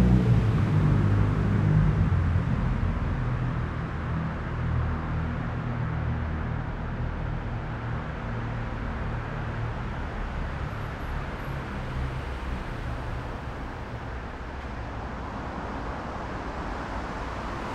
Perugia, Italia - the mouth of the Kennedy tunnel
traffic in front of the tunnel
[XY: smk-h8k -> fr2le]